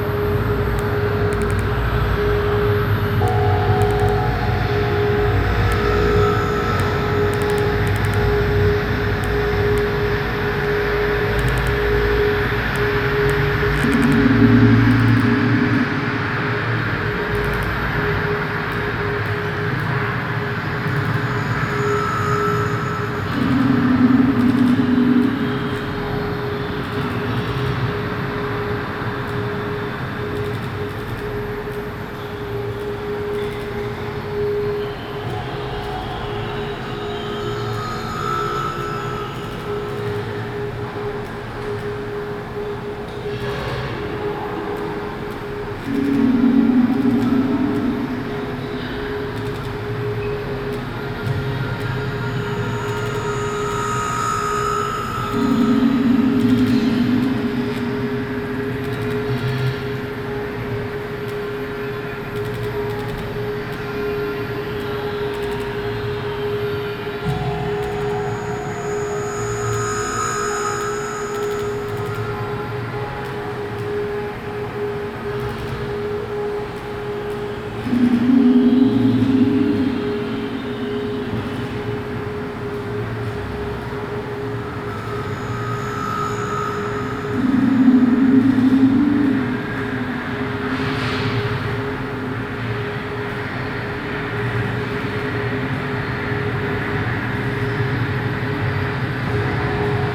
{"title": "Stadtkern, Essen, Deutschland - essen, forum for art & architecture, exhibition", "date": "2014-06-17 15:30:00", "description": "Inside the ground floor exhibition hall of the forum for art and architecture during the intermedia sound art exhibition Stadtklang//: Hörorte. Excerpt of the sound of the multi-channel composition with sound spaces of the city Essen.\nProjekt - Klangpromenade Essen - topographic field recordings and social ambience", "latitude": "51.46", "longitude": "7.01", "altitude": "81", "timezone": "Europe/Berlin"}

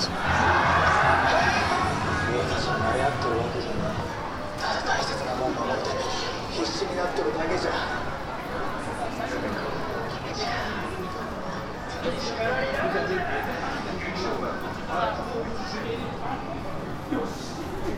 {"title": "udagawacho, shibuya, tokyo - walk along the street", "date": "2013-11-08 16:17:00", "description": "walk along one of the loudest streets in shibuya with an end stop on some backyard", "latitude": "35.66", "longitude": "139.70", "altitude": "42", "timezone": "Asia/Tokyo"}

